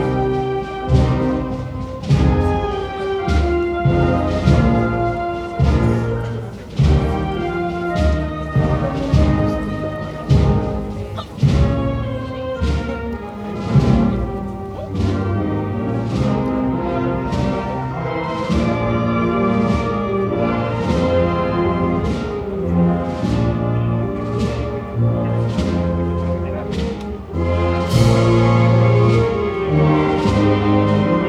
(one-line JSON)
{"title": "Calle Marqués Viudo de Pontejos, Madrid, Spain - easter procession", "date": "2018-04-08 09:33:00", "description": "Final March of the easter procession on the streets of Madrid to Iglesia de San Miguel\nNight on Sunday the 1th of April\nrecorded with Zoom H6 and created by Yanti Cornet", "latitude": "40.42", "longitude": "-3.70", "altitude": "652", "timezone": "Europe/Madrid"}